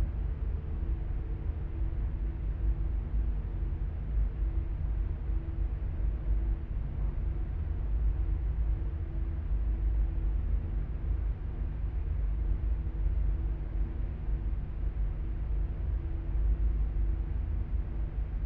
Golzheim, Düsseldorf, Deutschland - Düsseldorf. Robert Schumann Hochschule, Krypta
Inside a basement chamber of the music school building which has been turned into a Krypta by the artist work of Emil Schult in five years work from 1995 to 2000.
The sounds of the room heating and ventilation and music coming from the rehearsal chambers of the floor above.
This recording is part of the exhibition project - sonic states
soundmap nrw - sonic states, topographic field recordings and art places